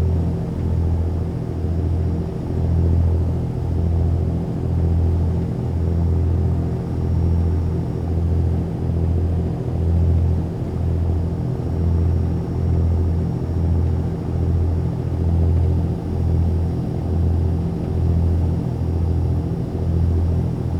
Farne Islands ... - Grey Seal Cruise ...
Grey seal cruise ... Longstone Island ... commentary ... background noise ... lavalier mics clipped to baseball cap ...
UK, 6 November 2018, 11:45